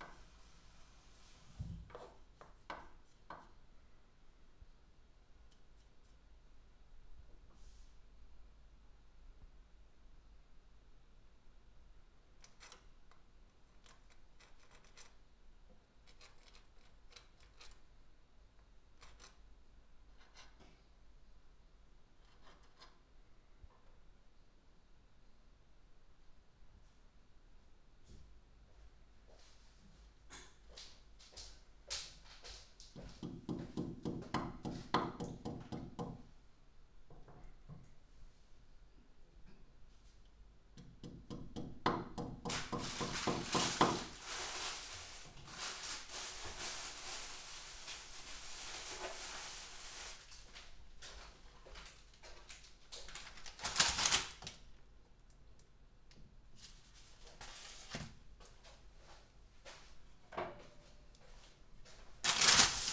Poznan, Mateckiego street, kitchen - wall drilling & sandwich making duet
recording in the kitchen. neighbors doing renovations, drilling holes, hammering and chiseling on the other side of a wall. groceries unpacking and making a sandwich sounds on our side.